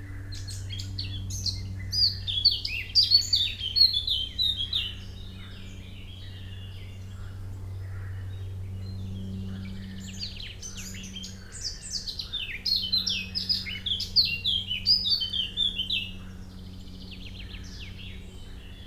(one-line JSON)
{"title": "Lovagny, France - Eurasian Blackcap", "date": "2017-06-11 08:50:00", "description": "An eurasian blackcap, so lovely bird, singing loudly in a path of the small village of Lovagny.", "latitude": "45.90", "longitude": "6.02", "altitude": "461", "timezone": "Europe/Paris"}